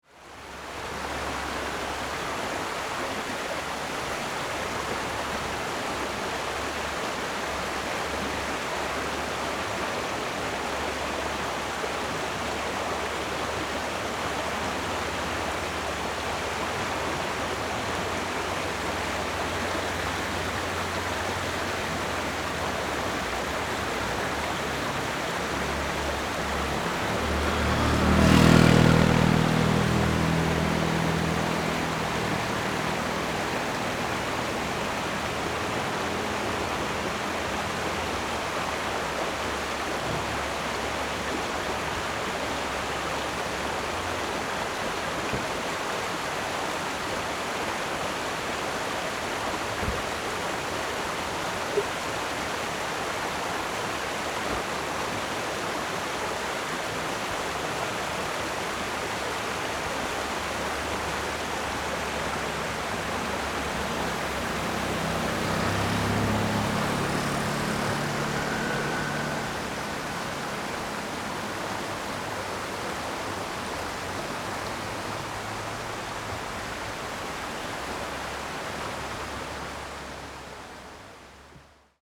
Qingyun Rd., Tucheng Dist., New Taipei City - sound of streams
sound of water streams
Zoom H4n +Rode NT4
Tucheng District, New Taipei City, Taiwan, 16 February 2012